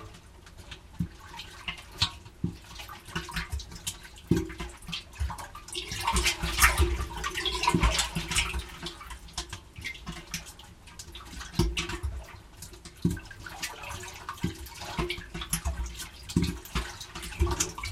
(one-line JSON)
{"title": "Emajõgi delta, rubber tires on dock", "date": "2008-08-05 23:04:00", "description": "A recording made from 2 binaural mics placed in some tires on the shore of the Peipsi lake.", "latitude": "58.40", "longitude": "27.30", "altitude": "30", "timezone": "Europe/Berlin"}